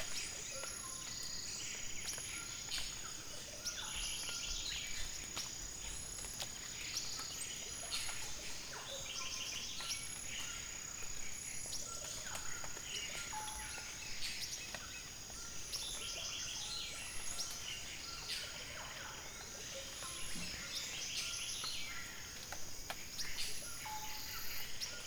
Reserva Nacional Tambopata, Peru - Rainforest atmospere
Rainforest atmosphere recorded in Tambopata National Reserve, Perú.